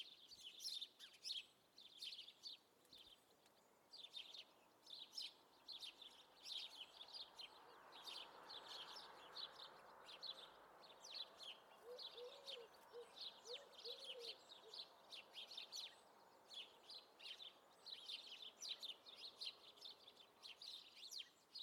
Lisburn, Reino Unido - Derriaghy Dawn-03
Field Recordings taken during the sunrising of June the 22nd on a rural area around Derriaghy, Northern Ireland
Zoom H2n on XY